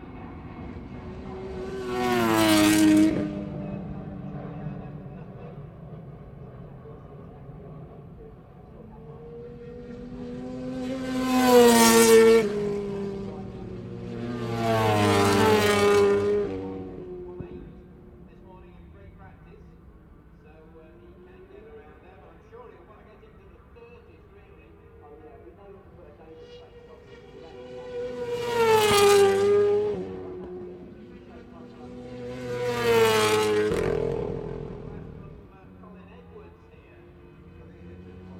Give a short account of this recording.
British Motorcycle Grand Prix 2003 ... Qualifying part two ... 990s and two strokes ... one point mic to minidisk ...